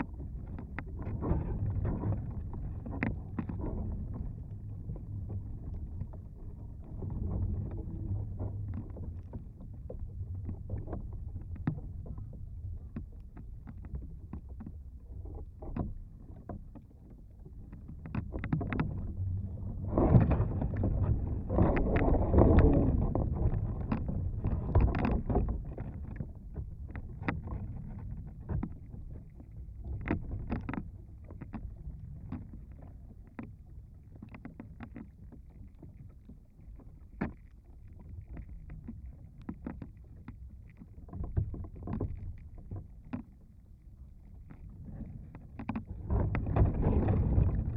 stump tree, Vyzuonos, Lithuania
dead tree listening with contact mics